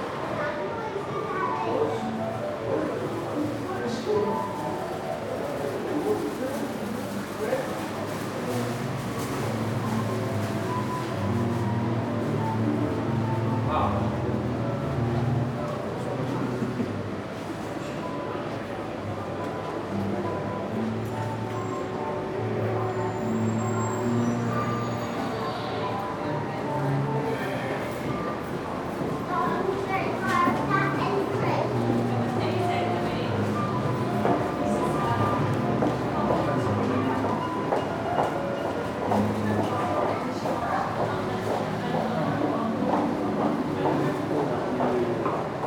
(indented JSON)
{
  "title": "Fountain Lane - Inside a shopping mall",
  "date": "2010-02-15 22:47:00",
  "latitude": "54.60",
  "longitude": "-5.93",
  "altitude": "12",
  "timezone": "Europe/Berlin"
}